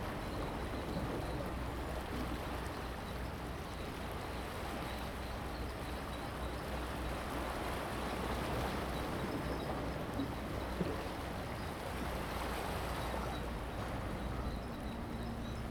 開元港, Koto island - Tidal waves
On the pier, Tidal waves, Consumers slope block, Construction cranes
Zoom H2n MS+XY